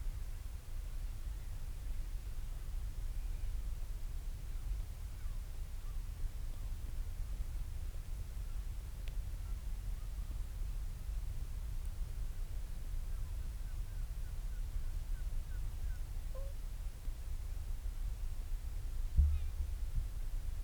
Marloes and St. Brides, UK - european storm petrel ...
Skokholm Island Bird Observatory ... storm petrel calls and purrings ... lots of space between the calls ... open lavalier mics clipped to sandwich box on bag ... calm sunny evening ...
Haverfordwest, UK, 16 May 2016